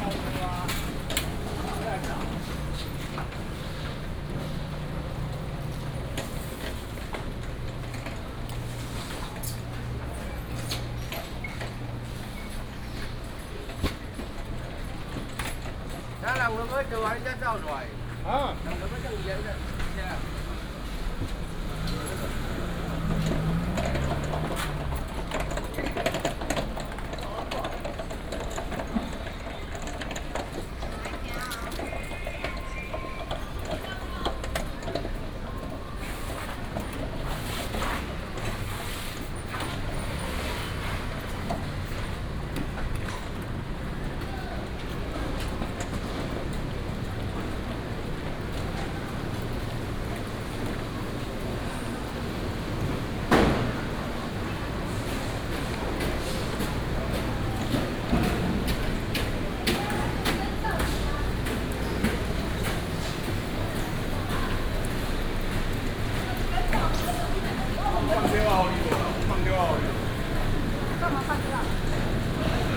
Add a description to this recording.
Walking in the Fish wholesale market, Traffic sound